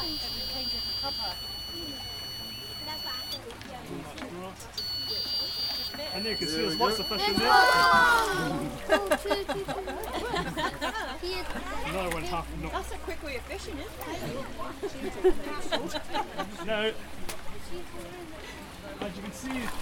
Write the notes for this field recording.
Electrofishing demonstration. Tweed Foundation biologists Ron Campbell and Kenny Galt zap fish in the Dean Burn at Hawick Museum as part of a 'bioblitz' biological recording event. Electric zaps and children's excitement and chatter as the fish are netted, biologists' commentary. Zoom H4N.